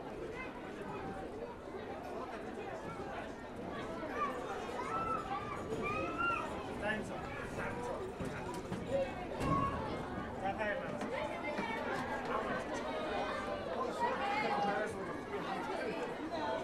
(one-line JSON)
{"title": "National amusement park, Ulaanbaatar, Mongolei - carousel", "date": "2013-06-01 15:20:00", "description": "traditional carousel without music", "latitude": "47.91", "longitude": "106.92", "altitude": "1293", "timezone": "Asia/Ulaanbaatar"}